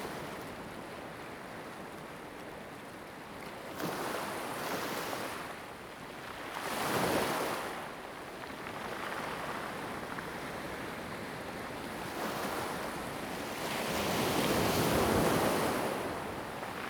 椰油村, Koto island - sound of the waves
sound of the waves
Zoom H2n MS +XY
Taitung County, Taiwan, 29 October 2014, 08:11